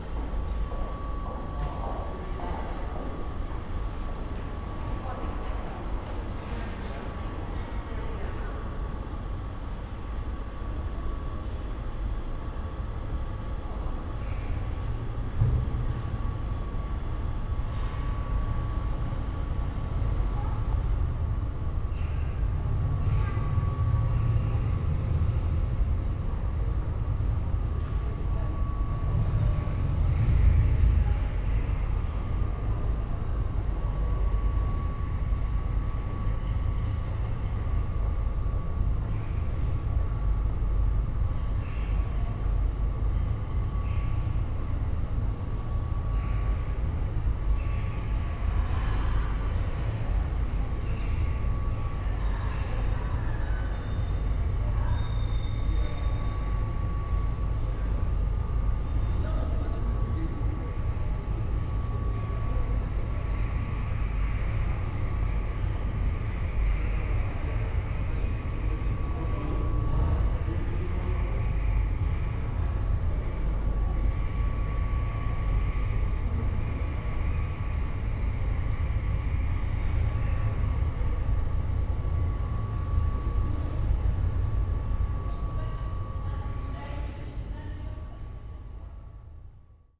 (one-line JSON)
{"title": "Hôtel de Ville, Aix-en-Provence, Fr. - yard ambience", "date": "2014-01-08 18:25:00", "description": "Hôtel de Ville, inner yard ambience, a high pitched sound of unknown origin can be heard, and a churchbell stroke.\n(Sony PCM D50, Primo EM172 AB)", "latitude": "43.53", "longitude": "5.45", "altitude": "209", "timezone": "Europe/Paris"}